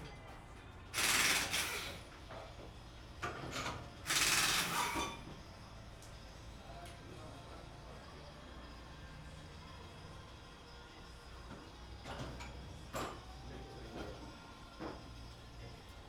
Poznan, Gorczyn district, tire shop - tire replacement
at a tire shop, tires being replaced on several cars, sound of machines, air pumps, hydraulic ramp. mechanics making appointments with customers, chatting.
województwo wielkopolskie, Polska, European Union, April 2013